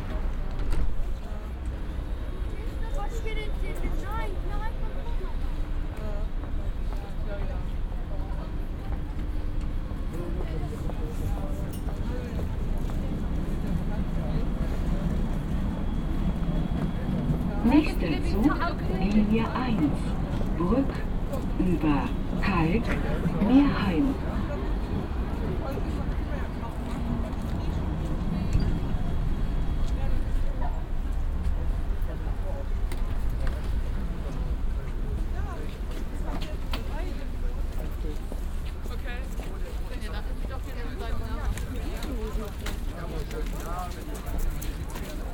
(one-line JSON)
{"title": "cologne, neumarkt, strassenbahnhaltestelle", "date": "2009-01-21 15:50:00", "description": "strassenbahnhaltestelle am frühen abend, feierabendverkehr, durchsagen, schritte, konversationen im vorübergehen\nsoundmap nrw: social ambiences/ listen to the people - in & outdoor nearfield recordings", "latitude": "50.94", "longitude": "6.95", "altitude": "56", "timezone": "Europe/Berlin"}